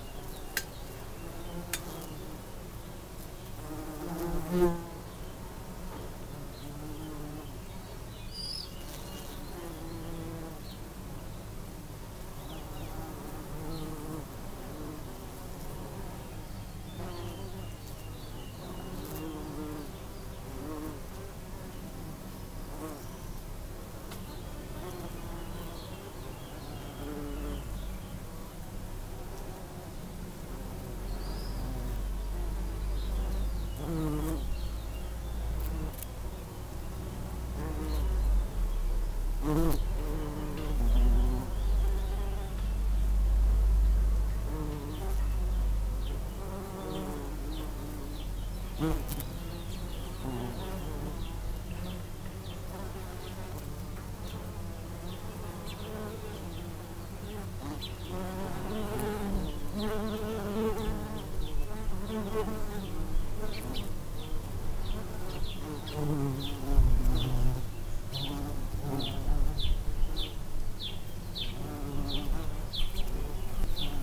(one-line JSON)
{"title": "alto, bumble bees in a lavender bush", "date": "2009-07-25 00:06:00", "description": "early in the morning, humble bees in a lavender bush\nsoundmap international: social ambiences/ listen to the people in & outdoor topographic field recordings", "latitude": "44.11", "longitude": "8.00", "altitude": "650", "timezone": "Europe/Berlin"}